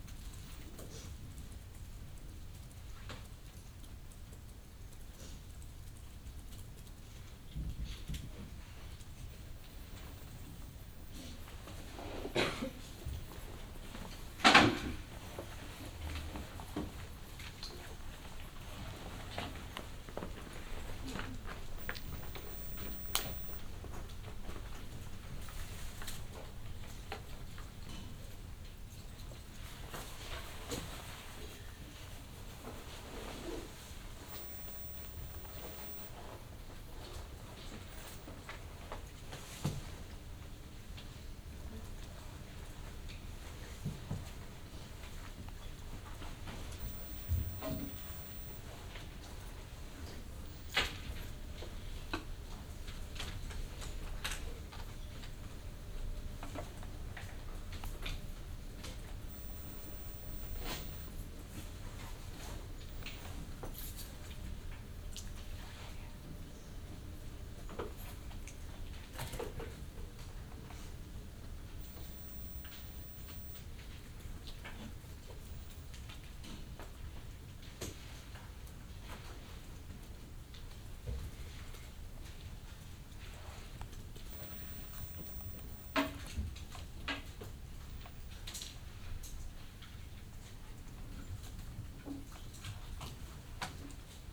Quiet sounds, Den Haag, Nederland - Quiet sounds in the library
Quiet sounds in the reading room of the Central Library in The Hague.
Binaural recording.